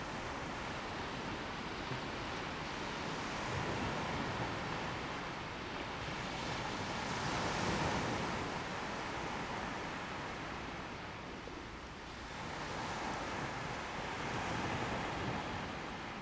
濱海林蔭大道, Xinwu Dist., Taoyuan City - Late at night on the beach
Late night beach, Sound of the waves, Zoom H2n MS+XY